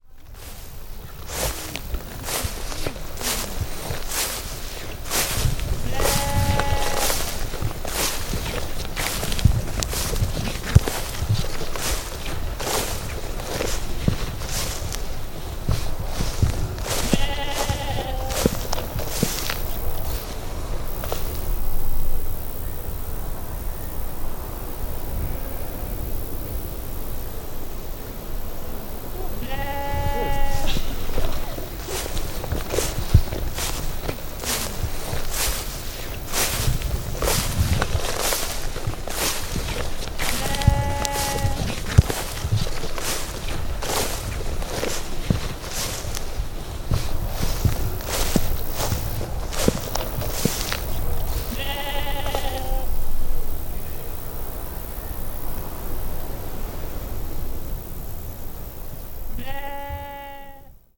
Dorset AONB, Dorchester, Dorset, UK - Walking and sheep talking
Sounds collected whilst walking in a field along the South Dorset Rigdeway. Recorded during a seasonal sound walk, a project run by DIVAcontemporary.
August 2014